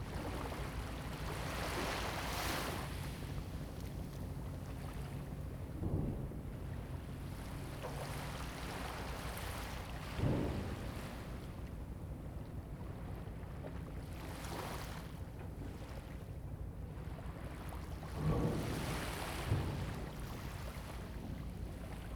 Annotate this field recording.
At the beach, Sound of the waves, Zoom H2n MS +XY